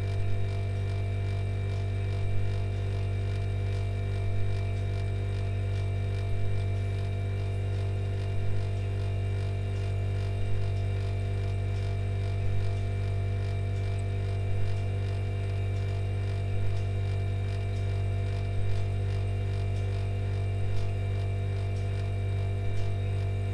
Maribor, Slovenia, Slomškov trg - Refrigerator jam
From the series of recordings of jamming with different ordinary objects - this one is "playing" a very loud old refrigerator - leaning it in different directions, opening it's doors, letting it sing on its own...
4 July 2008, 21:30